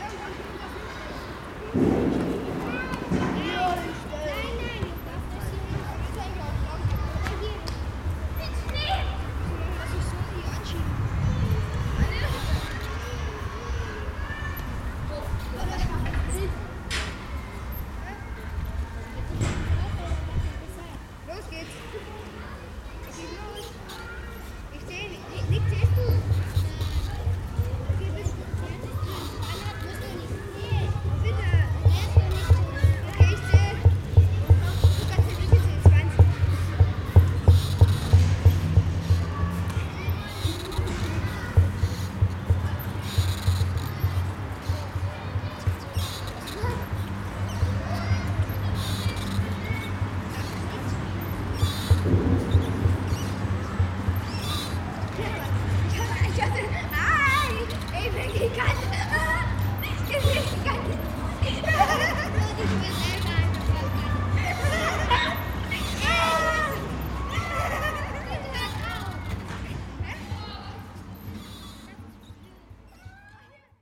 gotha, kjz big palais, am spielplatz - am spielplatz
kinderstimmen, geräusche vom bolzplatz, verkehr in der ferne. und irgendwas mysteriöses klopft da, keiner wusste, wo das herkommt...
Gotha, Germany